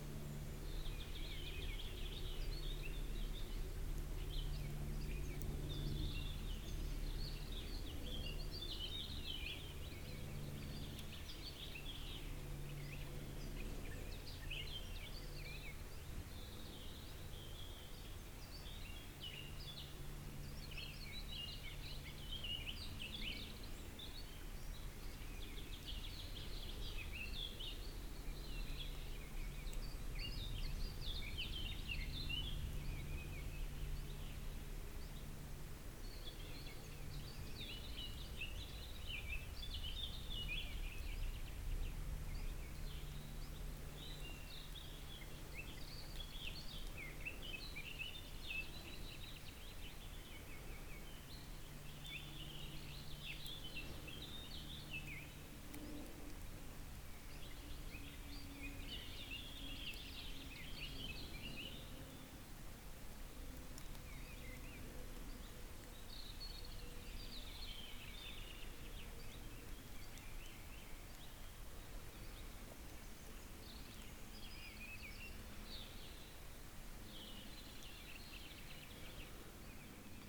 Walking uphill the forest path. Listening to the sound of the valley as a plane crosses the sky. Recorded in early spring in the early evening.
Hoscheid, Talklang
Den Waldweg hinauf gehend. Auf das Geräusch des Tales lauschend, als ein Flugzeug am Himmel fliegt. Aufgenommen im frühen Frühling am frühen Abend.
Hoscheid, bruit de la vallée
En montant le chemin de forêt vers la colline. Écoutons le bruit de la vallée tandis qu’un avion traverse le ciel. Enregistré au début du printemps en début de soirée.
Projekt - Klangraum Our - topographic field recordings, sound objects and social ambiences